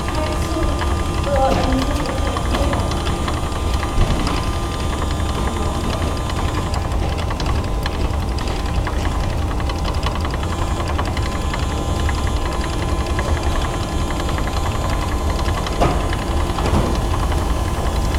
Braunschweig Hauptbahnhof, Gepäcktransportband, rec 2004
Brunswick, Germany